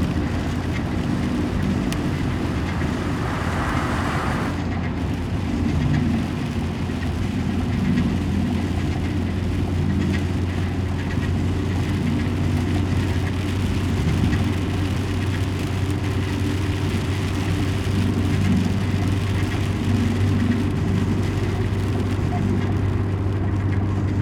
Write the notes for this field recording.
Recorded with a Zoom H1 with a Lake Country Hail storm and the car stereo blathering.